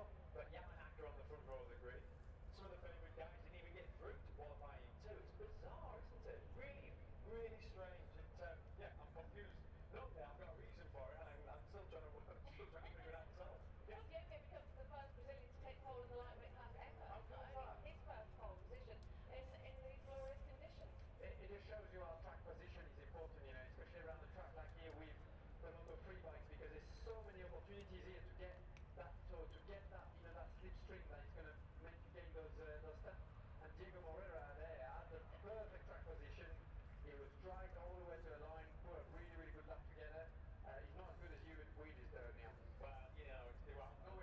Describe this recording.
british motorcycle grand prix 2022 ... moto three qualifying two ... dpa 4060s on t bar on tripod to zoom f6 ...